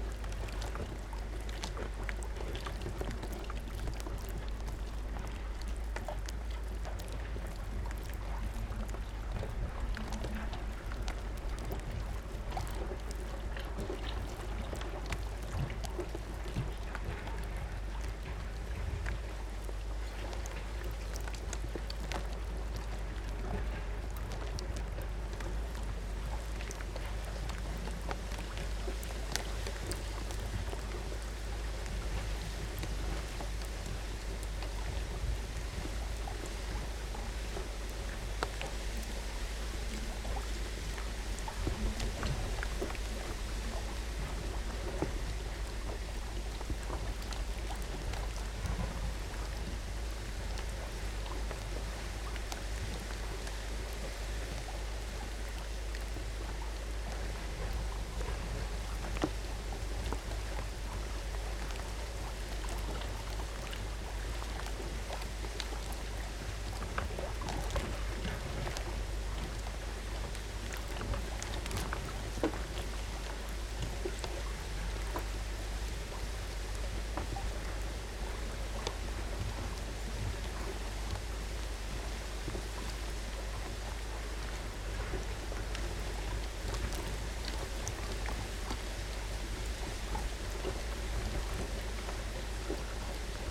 Portugal - Lago Azul Fluvial Beach structure
Lago Azul fluvial beach structure balacing on water, waves and a nearby buldozer in the background. Recorded with a pair of DYI Primo 172 capsules in AB stereo configuration onto a SD mixpre6 audio recorder.